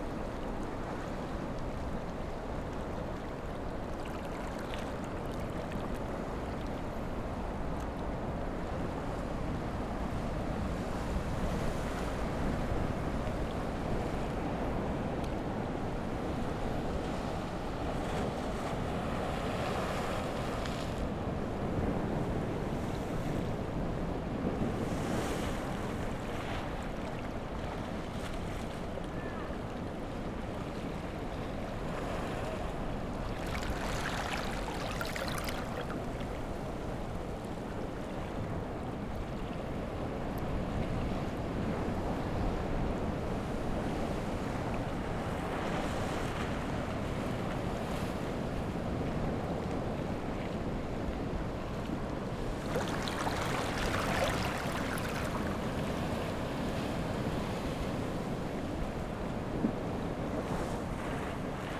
Cape San Diego, Taiwan - On the coast
On the coast, Sound of the waves
Zoom H6 MS mic+ Rode NT4